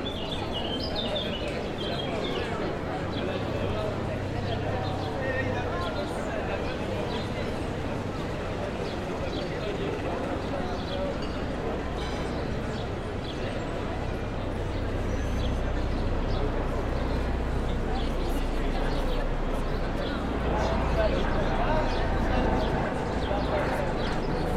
Pl. Saint-Georges, Toulouse, France - Saint-Georges
street, square, bar, birds, city noise .
Captation : ZOOMH6